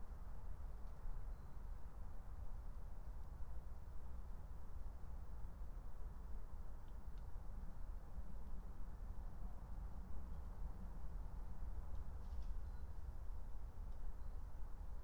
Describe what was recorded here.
18:50 Berlin, Alt-Friedrichsfelde, Dreiecksee - train junction, pond ambience